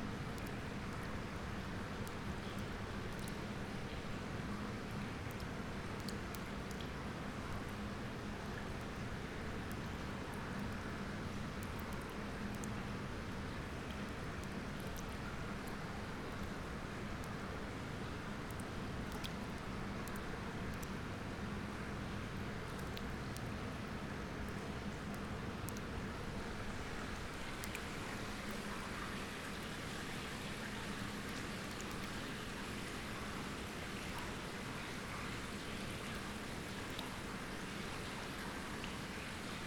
Silent Valley tunnel
Water Drops recording
Newry and Mourne, UK, 25 April 2010